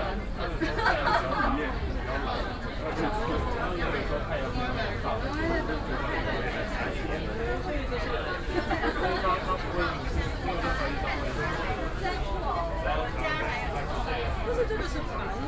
四四南村, Xìnyì Rd, Xinyi District, Taipei City - Creative Market
Xinyi District, Taipei City, Taiwan